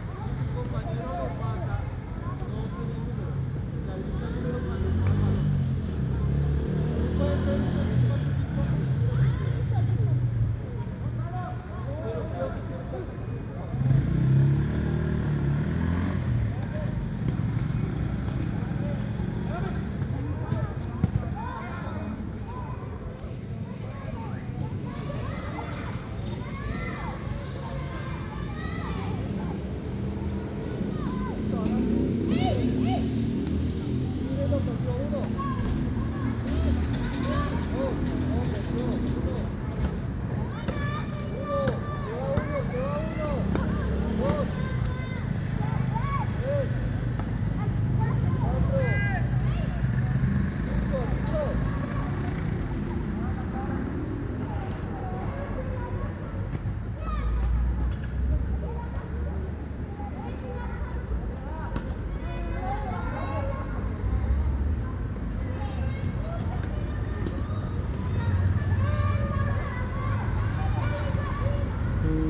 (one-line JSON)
{"title": "Cl. 4 Sur, Bogotá, Colombia - park at 3pm", "date": "2021-05-27 15:30:00", "description": "Children and adolescents playing soccer while vehicles circulate in the surroundings", "latitude": "4.59", "longitude": "-74.09", "altitude": "2577", "timezone": "America/Bogota"}